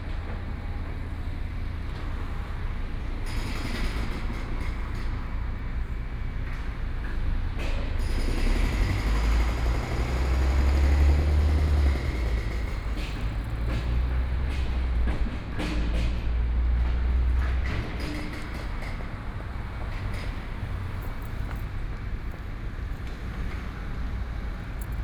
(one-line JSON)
{"title": "Luiserstraße, 慕尼黑德國 - road construction", "date": "2014-05-06 21:09:00", "description": "road construction, Standing on the roadside", "latitude": "48.14", "longitude": "11.56", "altitude": "521", "timezone": "Europe/Berlin"}